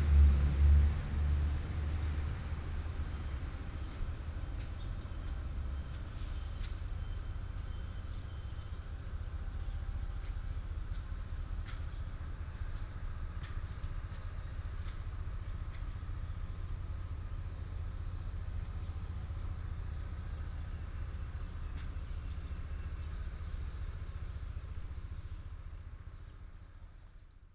三元宮, Yangmei Dist., Taoyuan City - In the square
In the square of the temple, Birds sound, Chicken sound, traffic sound, Sweeping voice
26 August 2017, 07:12